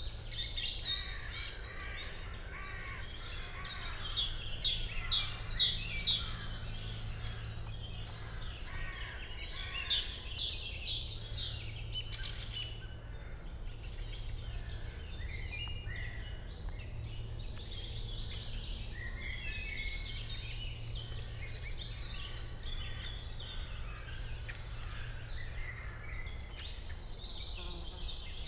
Königsheide, Berlin, spring morning forest ambience at the pond, distant city sounds
(Sony PCM D50 DPA4060)